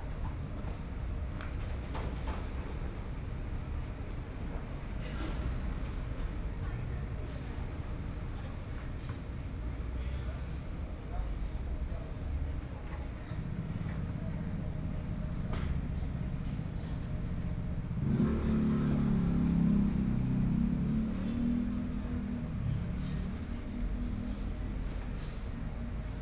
Being ready to start business and shopping street cleaning, Binaural recordings, Sony PCM D50 + Soundman OKM II